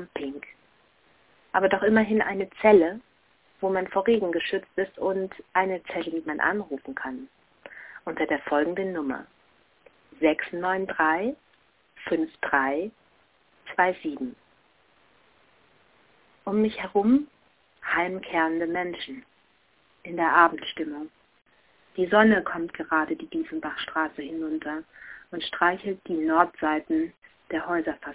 Telefonzelle, Dieffenbachstraße - Zelle unter Platanen 10.07.2007 20:14:09
Berlin